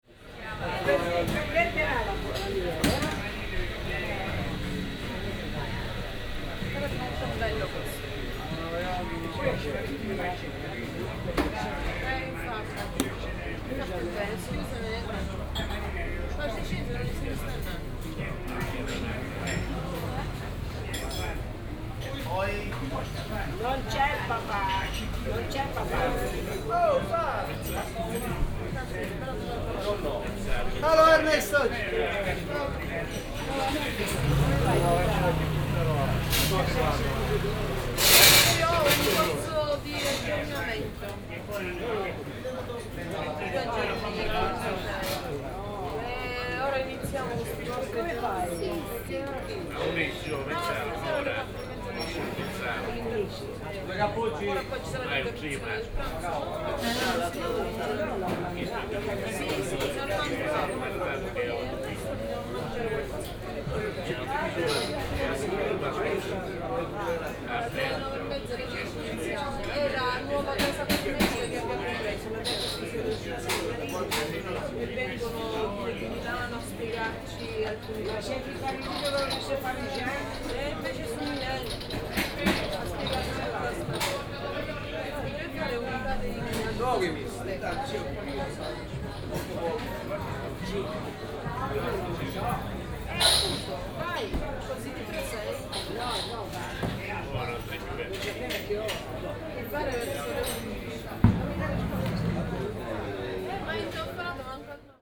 cafe ambience on a sunday morning in autumn.